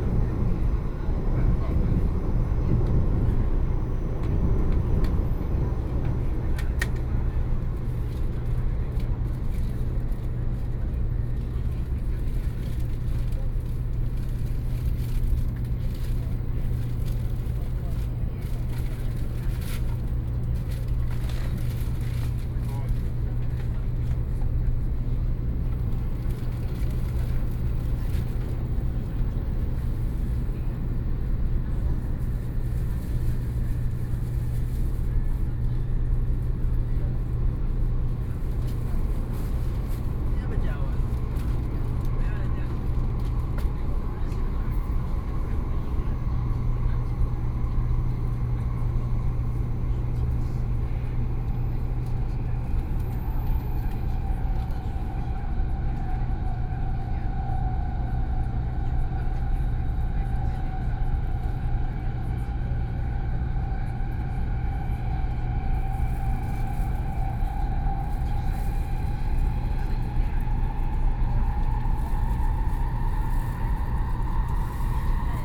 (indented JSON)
{
  "title": "Taiwan High Speed Rail - In the compartment",
  "date": "2013-07-26 14:06:00",
  "description": "Taiwan High Speed Rail, In the compartment, Sony PCM D50 + Soundman OKM II",
  "latitude": "25.03",
  "longitude": "121.49",
  "altitude": "1",
  "timezone": "Asia/Taipei"
}